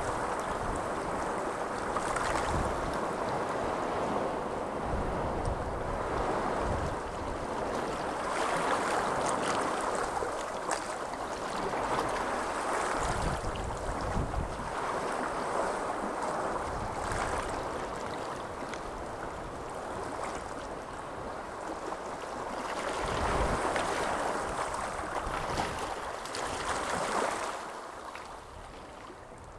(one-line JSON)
{"title": "Middle Hope Cove - Turning of the tide at Middle Hope", "date": "2017-05-22 16:56:00", "description": "This was part of a delightful walk on Sand Point...a beautiful little peninsular north of Weston Super Mare. Often very quiet, this was a warm day with a fresh breeze causing quite a lot of chop in the waters. The beach at Middle Hope is shingle so the swash and backwash have some interesting notes in amongst the wetness", "latitude": "51.39", "longitude": "-2.96", "altitude": "2", "timezone": "Europe/London"}